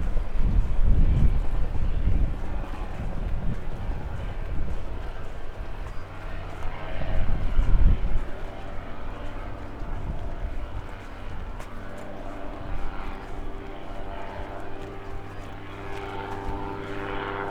Lubiatowo, sandy path towards the beach - helicopter
a small, simple helicopter hovering over the beach. basically a few pipes, a seat, an engine and rotors. no cabin. looked as if someone build it on their own in their garage. but very cool sounding. sunbathers coming back from the beach.
Poland, 16 August 2015, 16:16